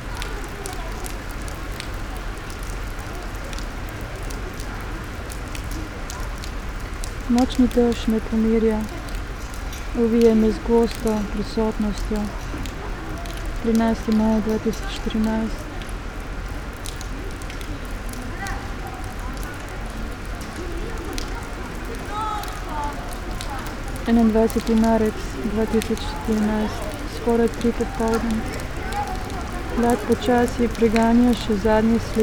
Secret listening to Eurydice, Celje, Slovenia - reading poems with raindrops
reading fragmented poems of my own realities (Petra Kapš)
last few minutes of one hour reading performance Secret listening to Eurydice 13 / Public reading 13 / at the Admission free festival.